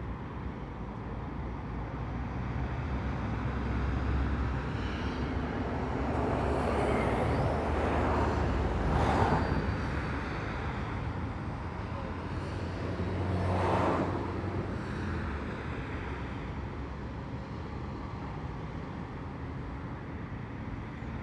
Freiham Bf., München, Deutschland - morning traffic Bodenseestrasse
Dense morning traffic in the Wild-West-Munich
Bayern, Deutschland